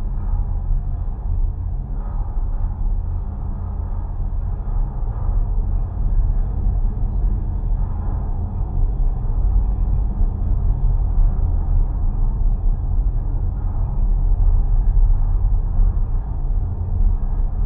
{"title": "Jannali, NSW, Australia - Transmission tower in a small area of bushland in Jannali", "date": "2014-09-06 15:00:00", "description": "I remember putting my ears against this tower a few months ago and have been wanting to record it since but couldn't as I was waiting for one of my contact microphones to be repaired and to be delivered. There was a problem with the postage and the first microphone never arrived after two months. Another was sent two weeks ago and I finally received it yesterday, along with two XLR impedance adaptors, so I am able to use my contact mics and hydrophones again!\nRecorded with two JRF contact microphones (c-series) into a Tascam DR-680.", "latitude": "-34.02", "longitude": "151.07", "altitude": "31", "timezone": "Australia/Sydney"}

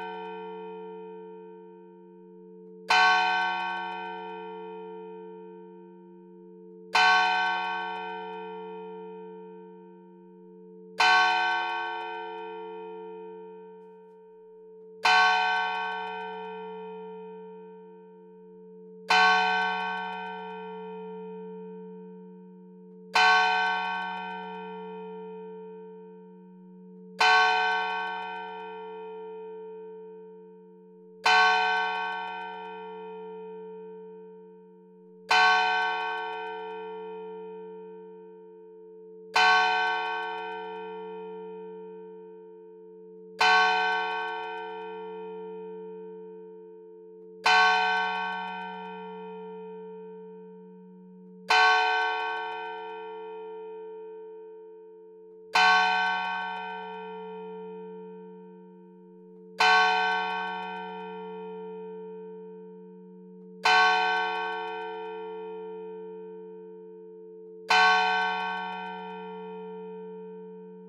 {"title": "Rue de l'Abbé Fleury, Saint-Victor-de-Buthon, France - St-Victor de Buthon - Église St-Victor et St-Gilles", "date": "2019-11-12 10:00:00", "description": "St-Victor de Buthon (Eure-et-Loir)\nÉglise St-Victor et St-Gilles\nLe glas\nPrise de son : JF CAVRO", "latitude": "48.41", "longitude": "0.97", "altitude": "223", "timezone": "Europe/Paris"}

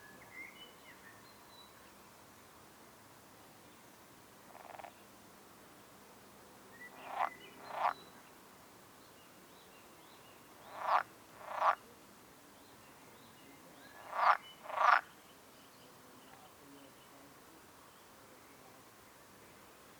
{"title": "Frosch - Bergisch Gladbach", "date": "2011-05-09 21:51:00", "description": "Frosch bei der Arbeit", "latitude": "51.01", "longitude": "7.08", "altitude": "89", "timezone": "Europe/Berlin"}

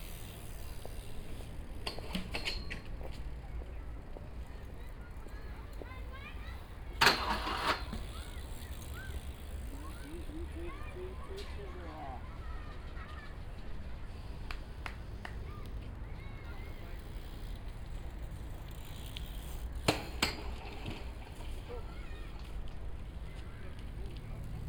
{"title": "köln, mediapark, bridge - bmx bikers practising", "date": "2010-10-10 16:40:00", "description": "BMX bikers jumping up and down on a metal railing (binaural, use headphones!)", "latitude": "50.95", "longitude": "6.94", "altitude": "52", "timezone": "Europe/Berlin"}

